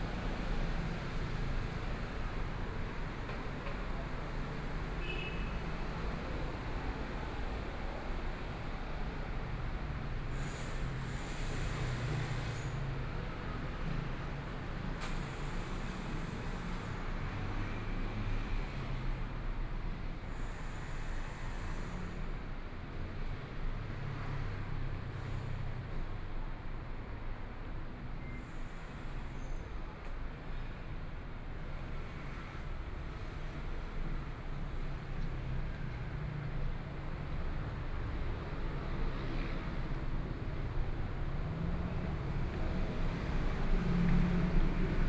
Chang'an E. Rd., Zhongshan Dist. - walking on the Road
walking on the Road, Traffic Sound, Motorcycle Sound, Pedestrians on the road, Binaural recordings, Zoom H4n+ Soundman OKM II